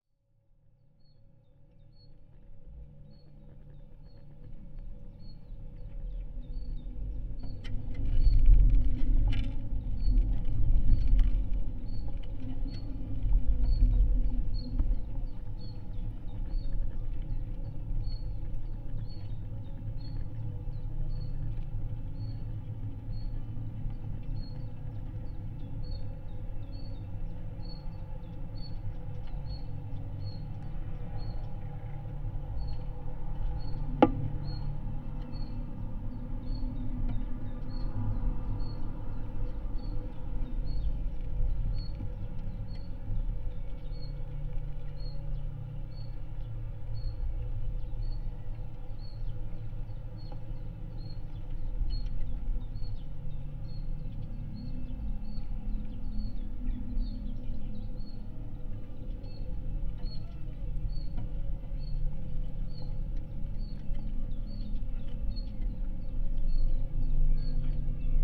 contact microphones on metallic elements of abandoned electricity pole
Utenos rajono savivaldybė, Utenos apskritis, Lietuva